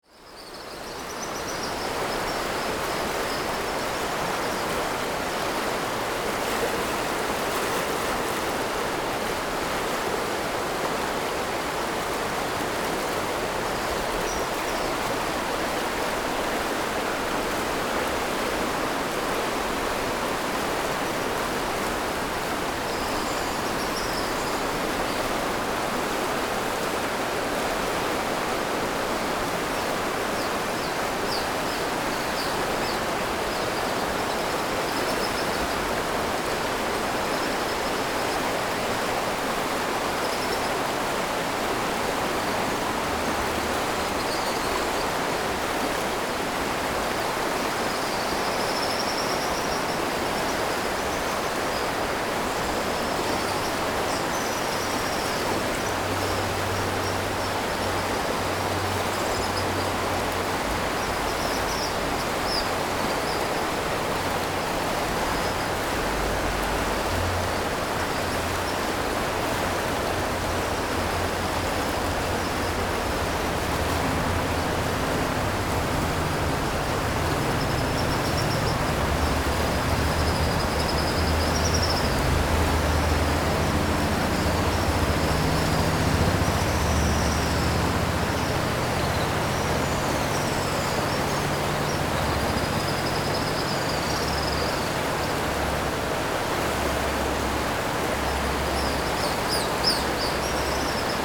Annong River, 三星鄉大隱村 - Streams and swallows
Streams and swallows, Stream after Typhoon, Traffic Sound, Under the bridge
Zoom H6 MS+ Rode NT4
Sanxing Township, 大埔, 25 July 2014, 16:14